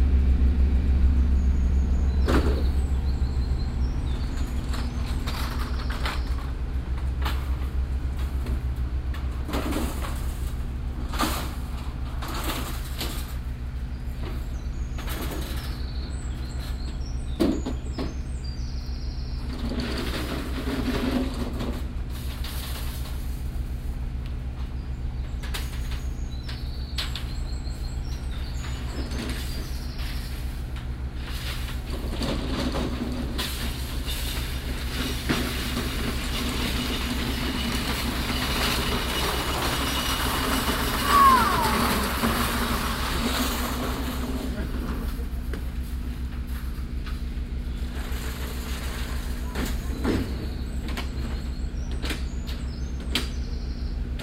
cologne, soundmap, stadtgarten, kühlwagen - cologne, soundmap, stadtgarten, kühlwagen
soundmap: köln/ nrw
kuehlwagen für getränke beim ausladen, brummen des generators, rollen, scheppern der kästen, passanten, morgens
project: social ambiences/ listen to the people - in & outdoor nearfield recordings
projekt klang raum garten
venloerstr, stadtgarten, einfahrt, 9 June 2008, ~17:00